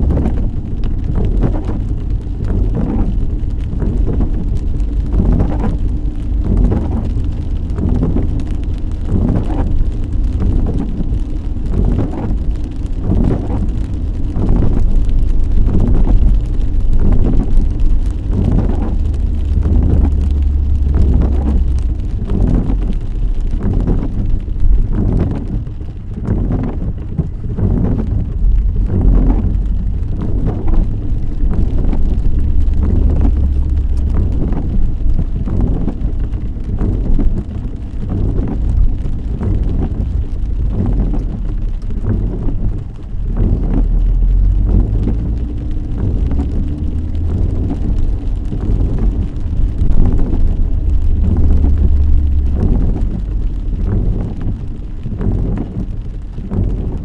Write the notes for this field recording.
windshield wiper, motor noise, rain. recorded on the road may 30, 2008 - project: "hasenbrot - a private sound diary"